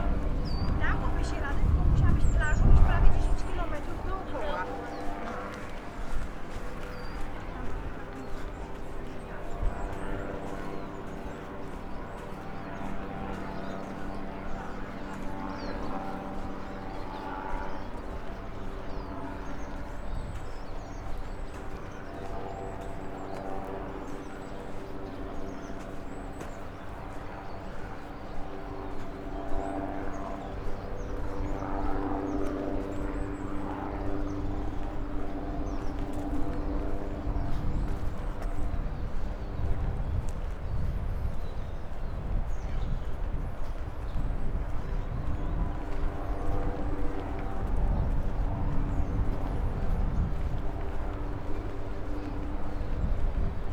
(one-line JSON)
{"title": "Lubiatowo, sandy path towards the beach - helicopter", "date": "2015-08-16 16:16:00", "description": "a small, simple helicopter hovering over the beach. basically a few pipes, a seat, an engine and rotors. no cabin. looked as if someone build it on their own in their garage. but very cool sounding. sunbathers coming back from the beach.", "latitude": "54.81", "longitude": "17.83", "altitude": "12", "timezone": "Europe/Warsaw"}